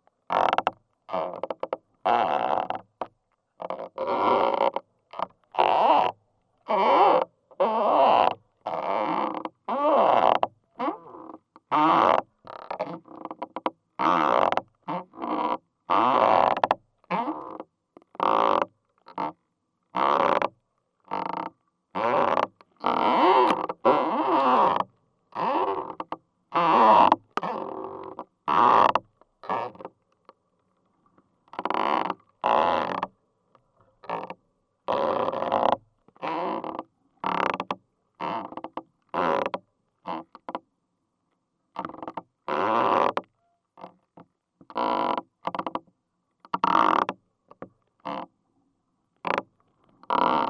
Sauclières, France - Two firs
Two firs are suffering with the wind. Crackling is recorded inside the tree, in a hole.